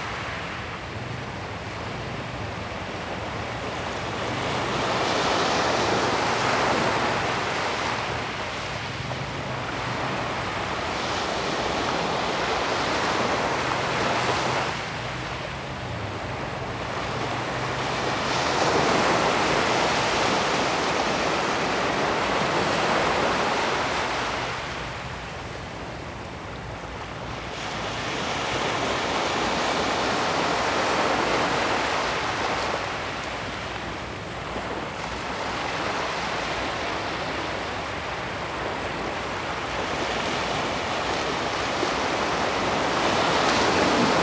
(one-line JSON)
{"title": "淡水區崁頂里, New Taipei City - the waves", "date": "2016-04-05 17:48:00", "description": "at the seaside, Sound waves\nZoom H2n MS+XY + H6 XY", "latitude": "25.21", "longitude": "121.43", "altitude": "31", "timezone": "Asia/Taipei"}